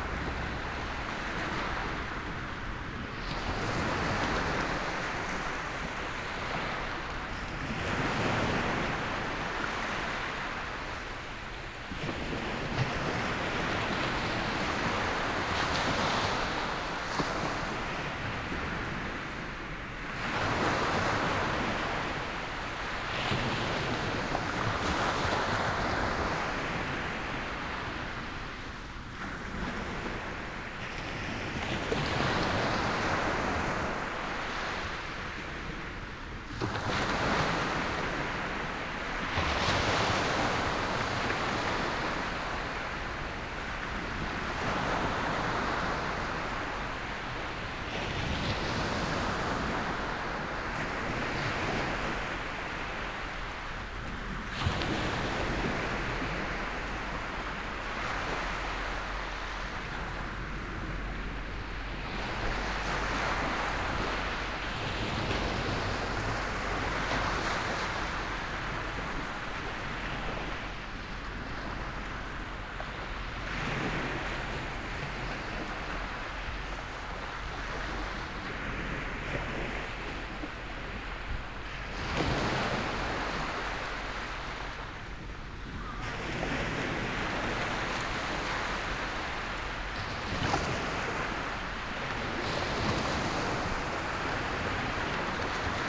Bridlington, Park and Ride, Bridlington, UK - south prom bridlington ... falling tide ...
south prom bridlington ... falling tide ... xlr sass on tripod to zoom h5 ... long time since have been able to record th ewaves ...